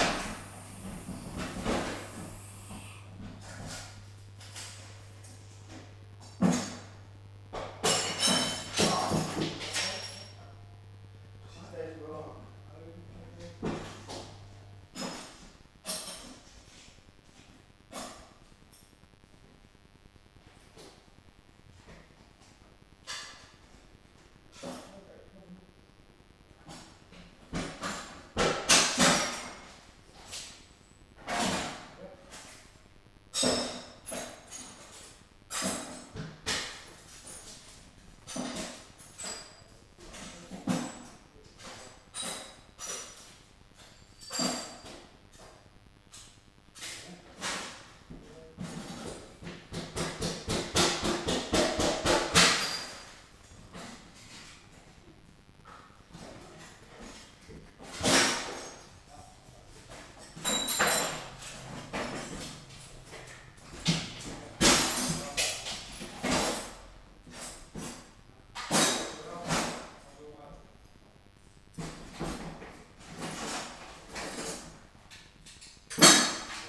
Glazier working. Repairing my windows after they were bombarded with hailstones the week before. I think the rhythmic noise pattern in this recording was caused by my wireless router. The recorder stood right next to it.